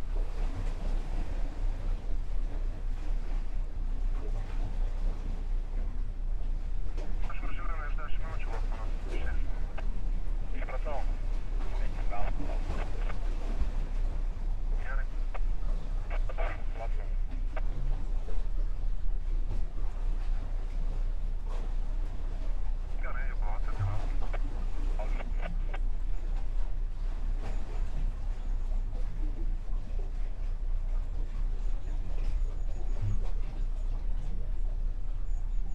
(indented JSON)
{
  "title": "Klaipeda, Lithuania, the pier and radio scanner",
  "date": "2018-10-21 11:10:00",
  "description": "listening to marine radio conversations on the pier stones",
  "latitude": "55.73",
  "longitude": "21.08",
  "timezone": "Europe/Vilnius"
}